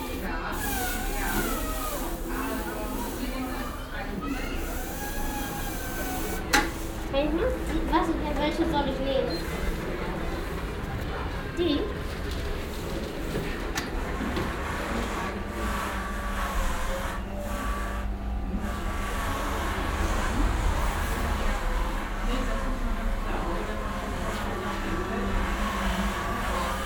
refrath, siebenmorgen, schuhgeschäft, schuhputzmaschine
nachmittags in schuhgeschäft - kind an schuhputzmaschine
soundmap nrw - social ambiences - sound in public spaces - in & outdoor nearfield recordings